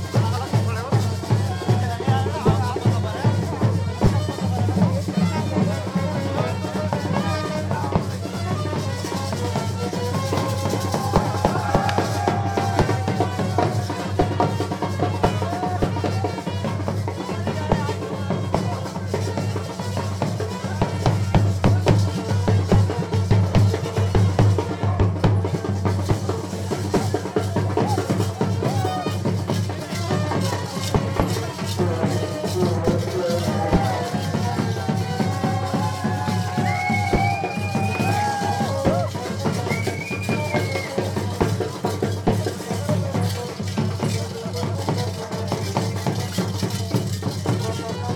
Rishikesh, Indoa, North Indian Wedding
the man who invited us after a while becamed our persecutor. Is not so easy to be guest here.